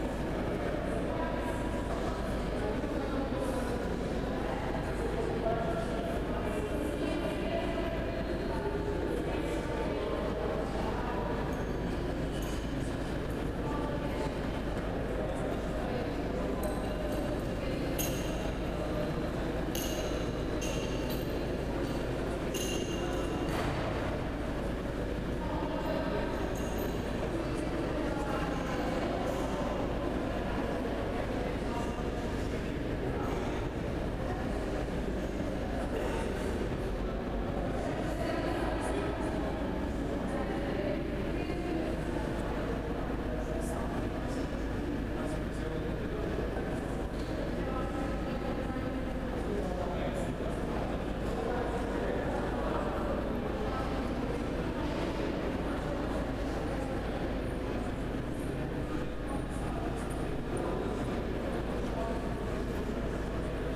ESAD, Caldas da Rainha, Portugal - 1º place
inside the building on the first floor with balcony
stereophonic pickup
28 February 2014, 14:00